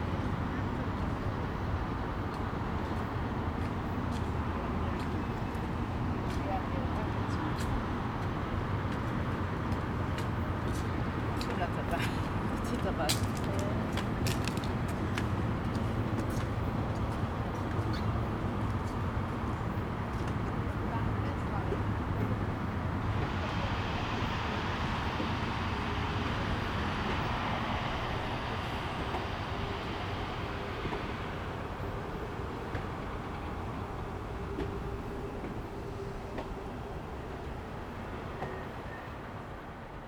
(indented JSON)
{
  "title": "Xindian District, New Taipei City - Small woods",
  "date": "2011-12-20 15:00:00",
  "description": "Small woods, Visitor, Traffic noise, Construction noise\nZoom H4n +Rode NT4",
  "latitude": "24.96",
  "longitude": "121.53",
  "altitude": "32",
  "timezone": "Asia/Taipei"
}